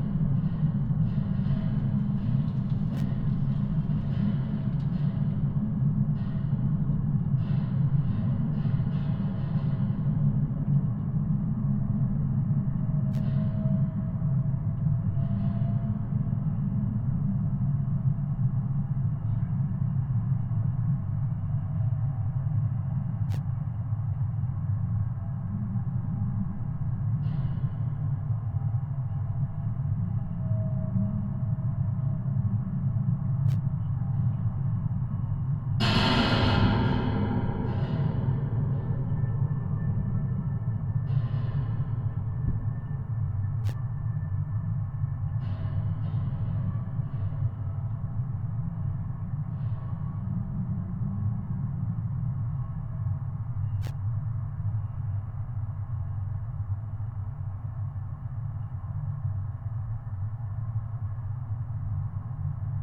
Tempelhof, Berlin - fence vibration and a signal
sound and vibrations of a long barrier fence around the planned pond area. there's a strange signal audible in the recording. i've heard it before, and first i thought it's a microphone malfunction. but it seems it's induced by activity from the nearby former radar station (or listening post?) which is odd. is it still active?
(PCM D50, DIY contact mics)